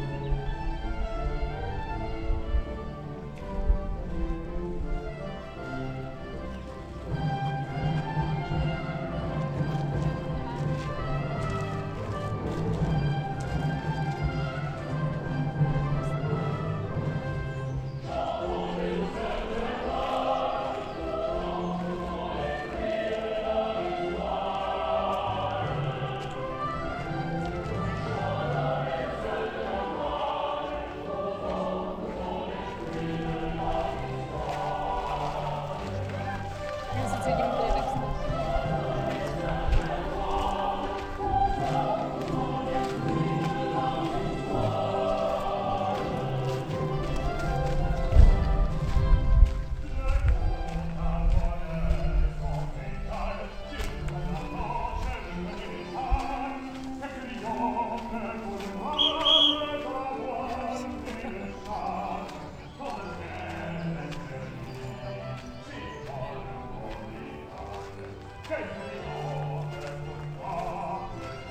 Jardins du château de Versailles, Place d'Armes, Versailles, France - Bosquets mis en musique
Morceau de musique dans un des bosquets.
On entend les promeneurs alentour.
Music playing in one of the groves.
Tourists can be heard nearby.